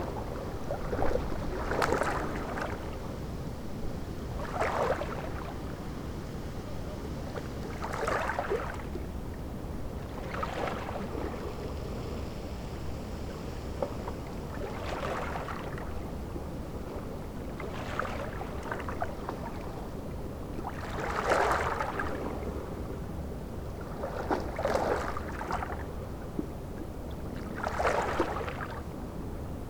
on the calm side of the peninsula
the city, the country & me: october 3, 2010
Middelhagen, Germany, 3 October 2010, 16:53